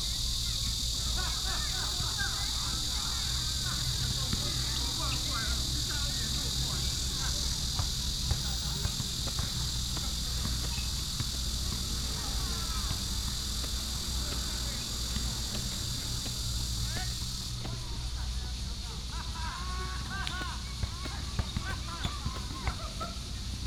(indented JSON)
{
  "title": "龍崗萬坪公園, Zhongli Dist., Taoyuan City - At the entrance to the park",
  "date": "2017-07-10 16:39:00",
  "description": "At the entrance to the park, Traffic sound, Cicadas, play basketball",
  "latitude": "24.93",
  "longitude": "121.25",
  "altitude": "165",
  "timezone": "Asia/Taipei"
}